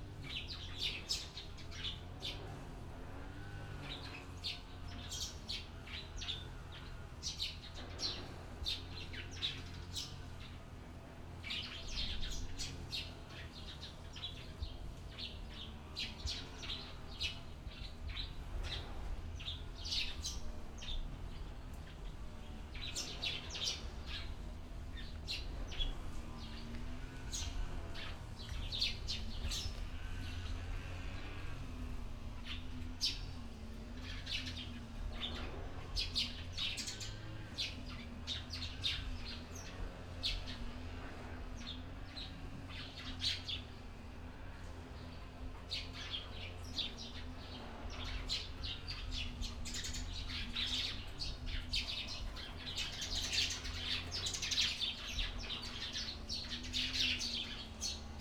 福安宮, Baisha Township - In front of the temple
In front of the temple, Birds singing, Mechanical sound in the distance
Zoom H6 + Rode NT4
Penghu County, Baisha Township